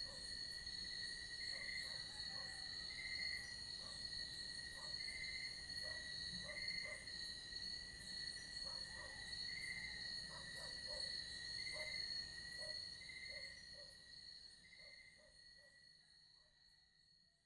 Iruhin East, Tagaytay, Cavite, Filippinerna - Tagaytay Iruhin East Valley #2
Sounds captured some hours before dawn by the valley along Calamba Road between Tagaytay Picnic Grove and People´s Park in the Sky. Birds, insects, lizards, roosters waking up and dogs barking. Less traffic by this hour of late night/early morning. WLD 2016
Tagaytay, Cavite, Philippines